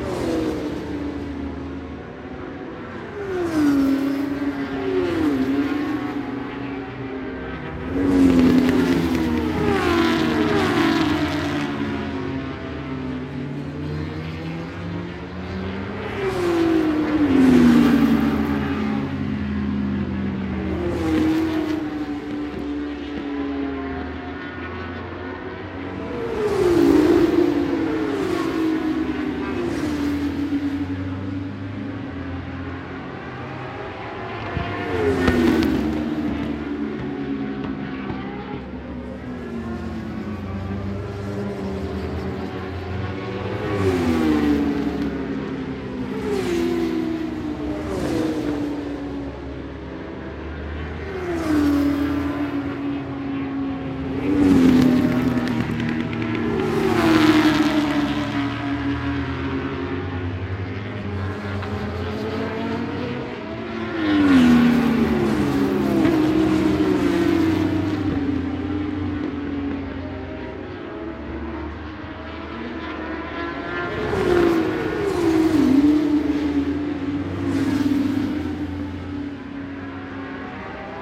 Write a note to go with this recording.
British Superbikes 2005 ... Superbikes ... FP2 contd ... one point stereo mic to minidisk ...